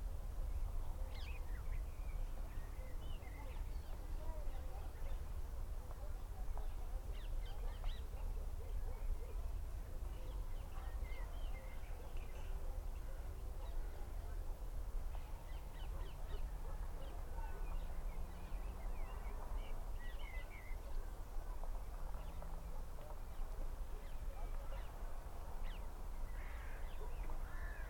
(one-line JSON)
{"title": "Klil, Israel - village spring sunset", "date": "2022-04-21 18:21:00", "description": "the pretty village is all green in the spring blossom. sunset from uphill. far away people, all sort of birds, and surprised hikers pass by.", "latitude": "32.98", "longitude": "35.20", "altitude": "168", "timezone": "Asia/Jerusalem"}